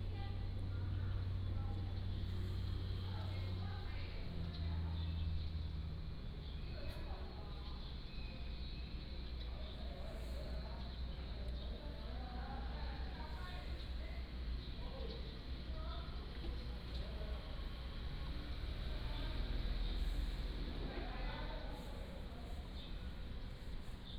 水仙宮, Hsiao Liouciou Island - In front of the temple
In the square in front of the temple
Pingtung County, Taiwan